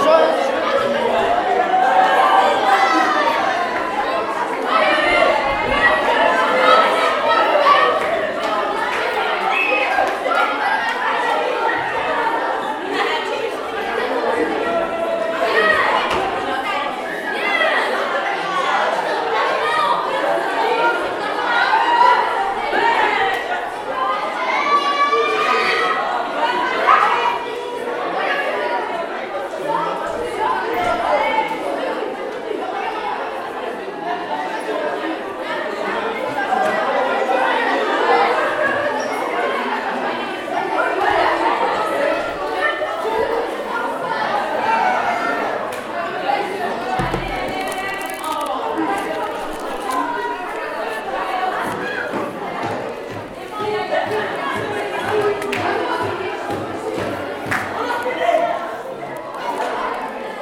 Coll!ège Twinger, rue Ovide, Strasbourg, France - School break in the Hall Collège Twinger, Strasbourg France
Recording of the morning school break in collège Twinger, Strasbourg, FRANCE.
Recorded with ZOOM H2 by the student.
LATI Program 2017